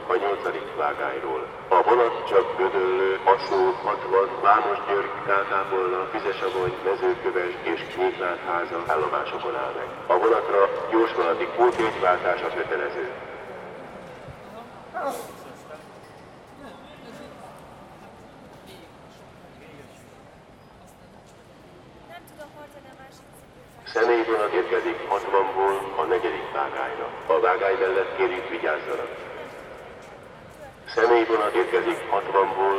Budapest, Kerepesi út, Hungary - Keleti Railway Station - Interior Acoustics Pt. 03
A soundwalk inside the Keleti Railway Station highlighting the extraordinary architectural acoustics of this massive structure. This recordings were originally taken while waiting for the Budapest --> Belgrade night connection. Recorded using Zoom H2n field recorder using the Mid-Side microhone formation.